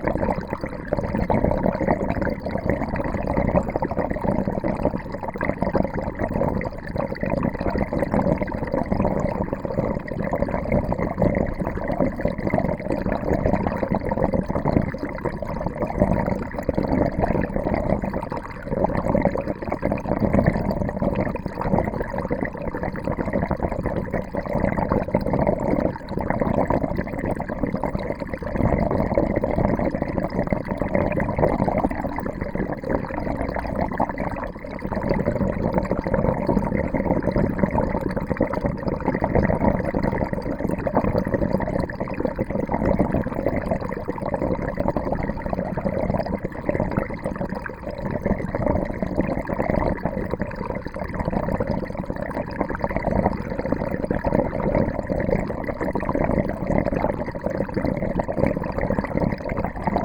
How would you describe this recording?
In this underground mine called Flora tunnel, water is becoming crazy. In a channel, water drains with a curious dentist sound ! Fascinating but also quite... scary !